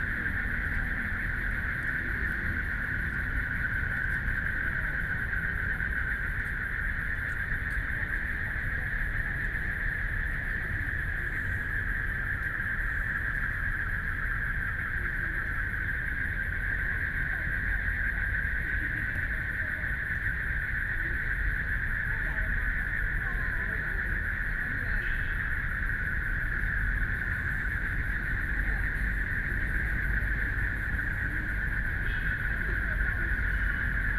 碧湖公園, Taipei City - In the park
In the park, People walking and running, Traffic Sound, Frogs sound
Binaural recordings
Taipei City, Taiwan, 19 March 2014, 19:23